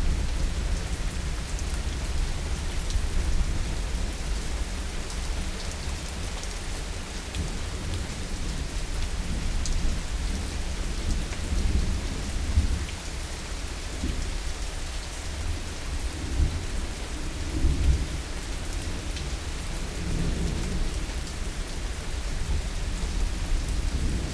Orzechowa, Gostyń, Polska - Summer Rain and Thunder
This is a recording of rain with thunder made in the backyard garden in the evening. Usi Pro microphones were used in AB position on a Rode Stereo Bar with Sound Devices MixPre-6-II.